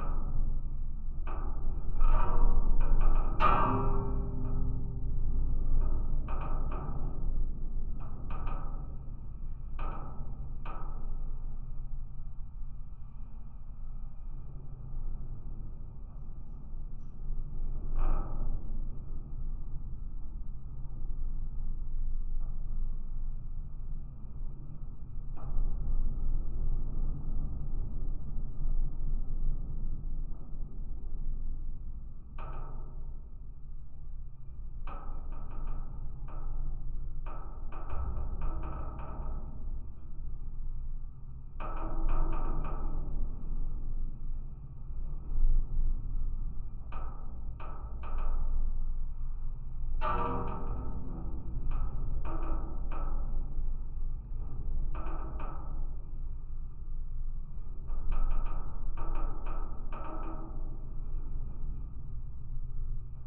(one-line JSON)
{"title": "Rokiškis, Lithuania, large metallic doors", "date": "2020-02-17 17:10:00", "description": "abandoned electrical substation. strong wind outside. contact microphones and LOM geophone on large metallic doors.", "latitude": "55.95", "longitude": "25.58", "altitude": "128", "timezone": "Europe/Vilnius"}